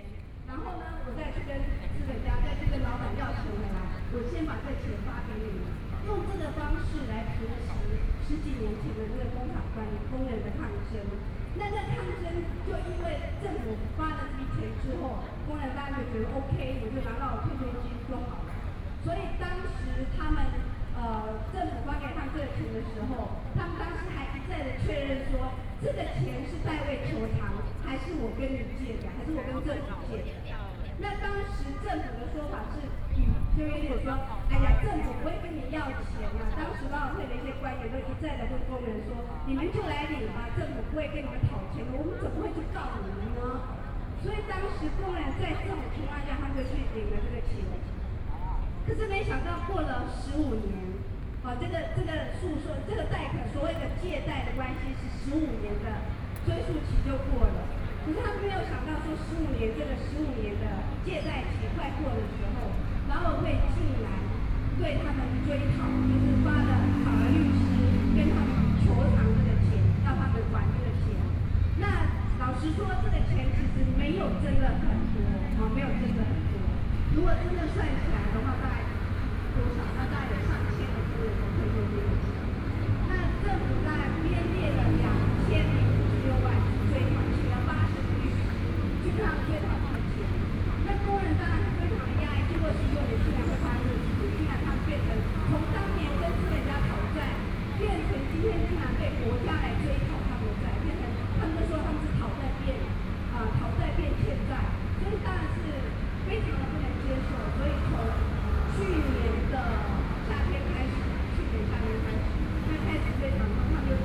Chiang Kai-Shek Memorial Hall - Protest
Off factory workers to protest on behalf of the connection description published, Traffic Noise, Sony PCM D50 + Soundman OKM II